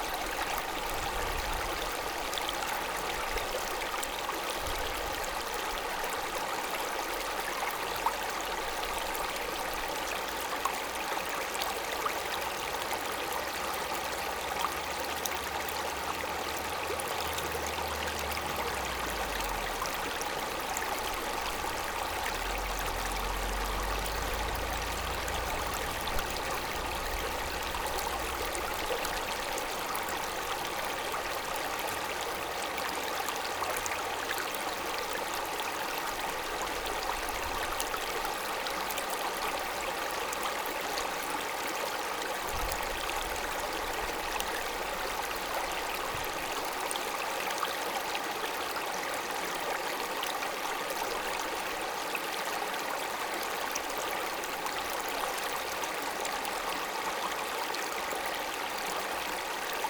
Saint-Marc-sur-Seine, France - Seine river
Seine river was a stream, now it's a proud small river, cheerful in the pasture. We followed all the Seine river (777,6 km), we stoped to walk here and began to swim exactly in this place, this small village of the endearing Burgundy area.
30 July 2017, 17:30